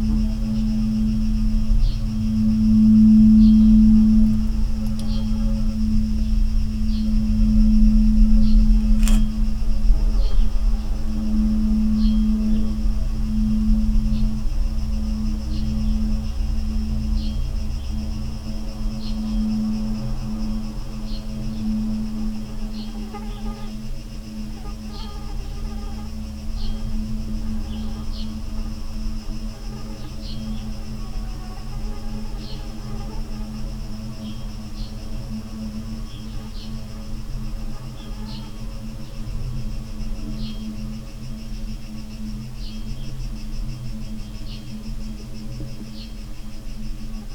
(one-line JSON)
{"title": "quarry, Marušići, Croatia - void voices - stony chambers of exploitation - borehole, microphony", "date": "2015-07-22 18:28:00", "description": "summer afternoon, very hot and dry", "latitude": "45.42", "longitude": "13.74", "altitude": "269", "timezone": "Europe/Zagreb"}